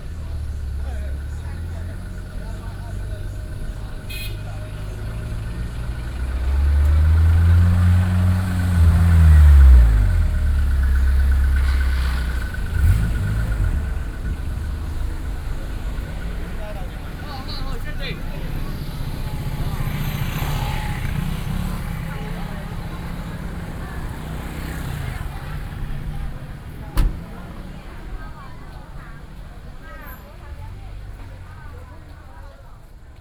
隆山路, 三芝區茂長里 - Walking through the traditional fair parade
Walking through the traditional fair parade, Traffic Sound
Binaural recordings, Sony PCM D50